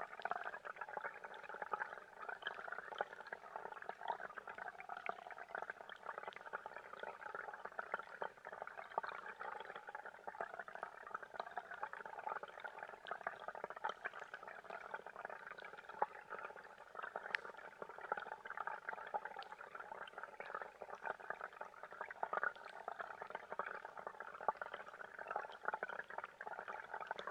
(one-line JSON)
{"title": "Lithuania, Utena, litle stream through hydrophone, WLD", "date": "2011-07-18 13:00:00", "description": "the small brooklet I re-visit constantly...this time - underwater recording. #world listening day", "latitude": "55.53", "longitude": "25.59", "altitude": "114", "timezone": "Europe/Vilnius"}